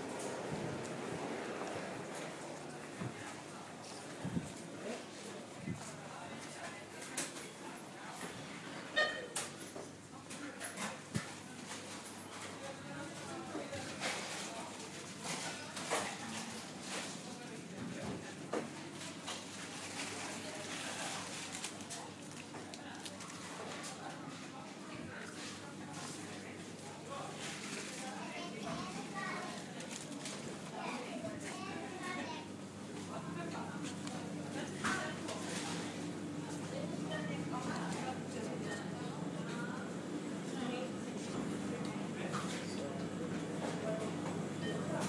Husavik, Iceland grocery store
shopping in Kasko grocery store in Husavik, Iceland